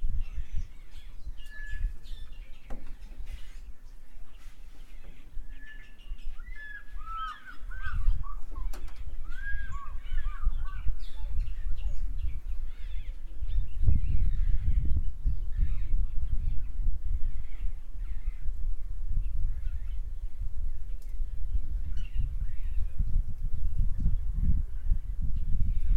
Namibia - Okavango - Ngepi Camp - Okavango birds
birds in the Okavango region, by the river shore in the Ngepi Camp.